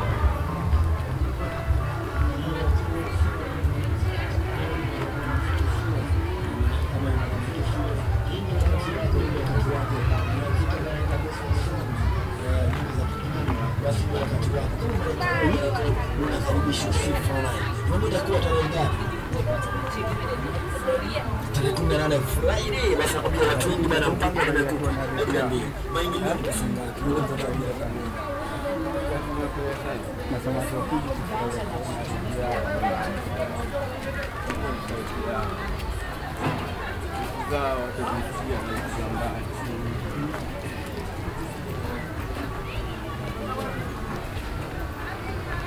Kibera, Nairobi, Kenya - Friday morning in Kibera...
We had been visiting “Jah Army”, a local youths group in Kibera with Ras Jahil from Pamoja FM, and Gas Fyatu from Rhyme FM; walking back now through narrow alley-ways and along market stalls towards Pamaja studio….
15 June 2010, ~11:00